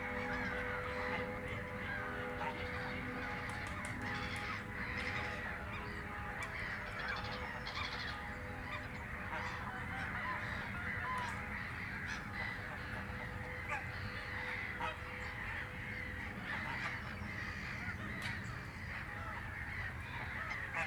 Moorlinse, Buch, Berlin - Easter morning ambience /w water birds
Lots of water birds at the Moorlinse pond, esp. Black-headed gulls (Lachmöwen, Chroicocephalus ridibundus). Easter church bells
(Tascam DR-100MKIII, DPA 4060)